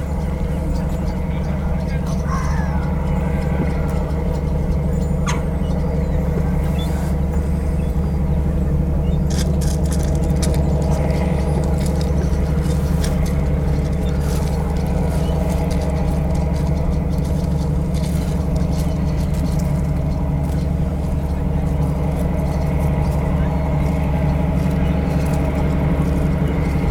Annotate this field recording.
Recorded on a Tascam dr 05x on a sunny Sunday afternoon. Schools due to restart on the following day after closing for the lockdown in March which morphed into a long long summer holiday